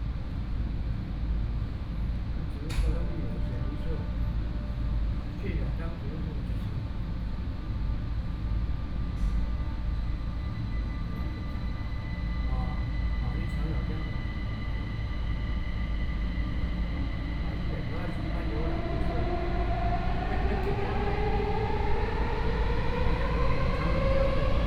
小港區正苓里, Kaohsiung City - Red Line (KMRT)
from Kaohsiung International Airport station to Siaogang station
Kaohsiung City, Taiwan